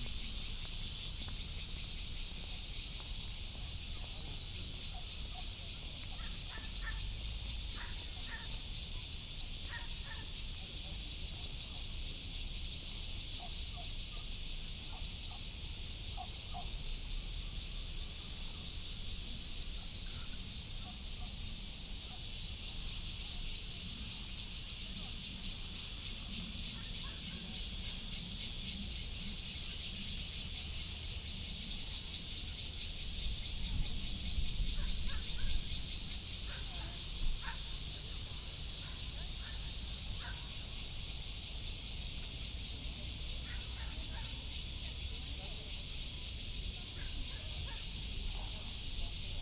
虎頭山環保公園, Taoyuan City - In the mountains of the park
In the mountains of the park, Traffic sound, Cicada sound, Dog